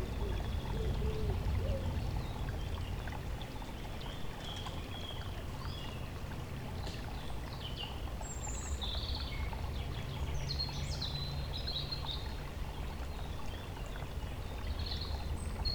the city, the country & me: may 7, 2011
Wermelskirchen, Germany, 7 May